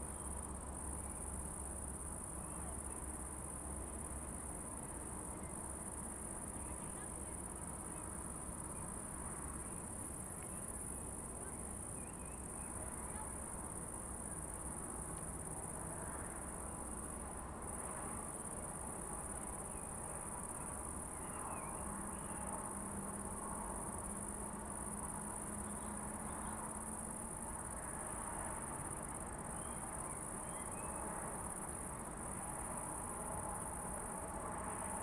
Crickets in the city on summer evening. Cars in the background.
Zoom H2n, 2CH, handheld.
Praha, Česká republika, July 2019